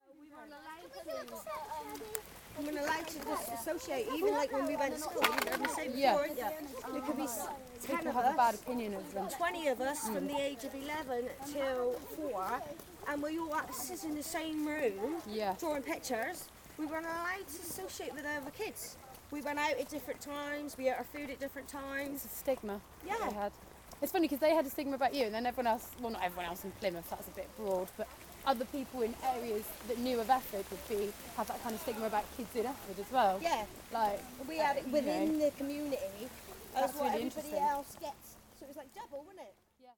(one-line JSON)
{"title": "Efford Walk One: On Military Road about Guild kids at school - On Military Road about Guild kids at school", "date": "2010-09-14 18:37:00", "latitude": "50.39", "longitude": "-4.10", "altitude": "56", "timezone": "Europe/London"}